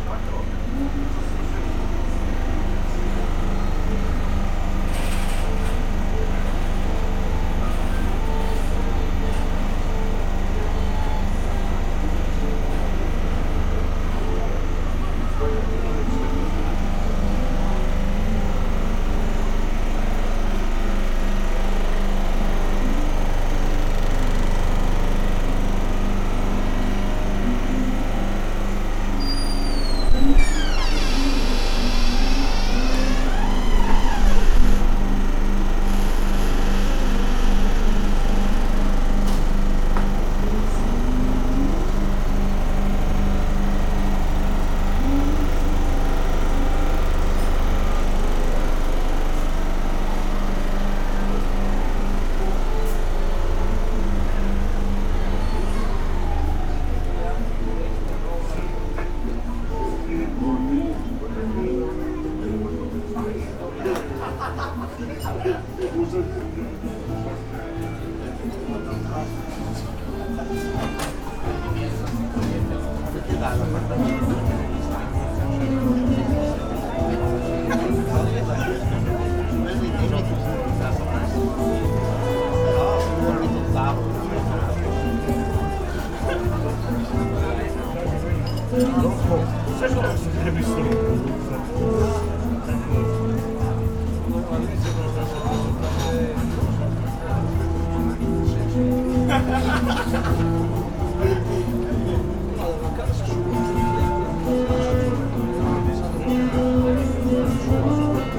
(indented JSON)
{
  "title": "sea room, Novigrad, Croatia - hot nights",
  "date": "2015-07-18 23:48:00",
  "description": "terrace band plays bessame song, restaurant aeration device in the anteroom runs in full power, built in closet wants to sing lullaby ...",
  "latitude": "45.32",
  "longitude": "13.56",
  "timezone": "Europe/Zagreb"
}